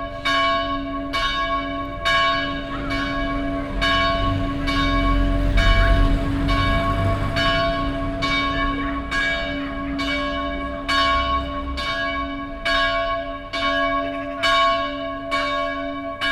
At the church in the early evening. The sound of the church bells accompanied by other village sounds like passing by traffic on the main road, a dog barking, a chain saw working on a barn yard across the street and two women having an evening conversation.
Merscheid, Kirche, Glocken
Bei der Kirche am frühen Abend. Das Geräusch der Kirchenglocken begleitet von anderen Dorfgeräuschen wie das Vorbeifahren von Verkehr auf der Hauptstraße, ein bellender Hund, eine Kettensäge, die auf einem Bauernhof auf der anderen Seite der Straße arbeitet, und zwei Frauen, die eine Abendunterhaltung führen.
Merscheid, église, cloches
Près de l’église, en début de soirée. Le son des cloches de l’église accompagné d’autres bruits du village, tel que le trafic roulant sur la rue principale, un chien qui aboie, une tronçonneuse sur une basse-cour de l’autre côté de la rue et deux femmes dans une conversation du soir.
Merscheid (Puetscheid), Luxembourg, 17 September 2011, 16:36